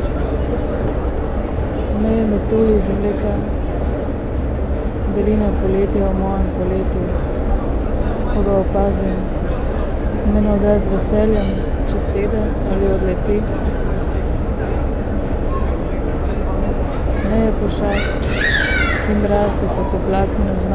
S-bahn Neukölln, Berlin, Germany - reading Pier Paolo Pasolini
reading poem Pošast ali Metulj? (Mostru o pavea?) by Pier Paolo Pasolini
Sonopoetic paths Berlin
4 September 2015, 21:59